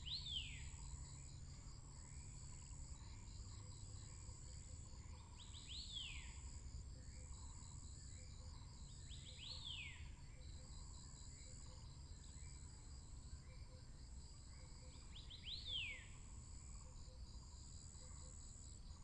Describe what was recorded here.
Bird sounds, Binaural recordings, Sony PCM D100+ Soundman OKM II